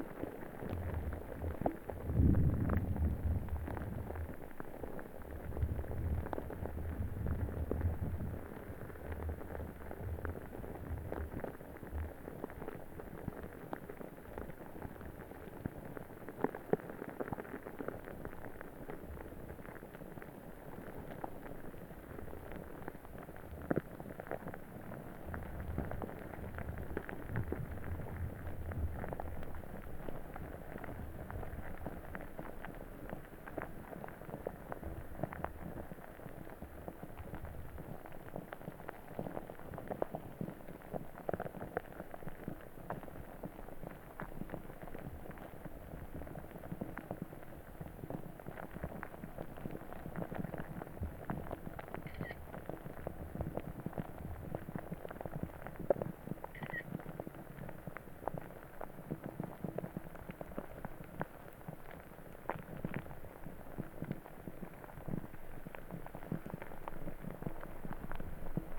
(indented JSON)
{
  "title": "Vilnius, Lithuania, moving ice in river",
  "date": "2021-03-03 13:20:00",
  "description": "Moving ice in river Neris. Recorded with contact microphones and geophone",
  "latitude": "54.69",
  "longitude": "25.26",
  "altitude": "90",
  "timezone": "Europe/Vilnius"
}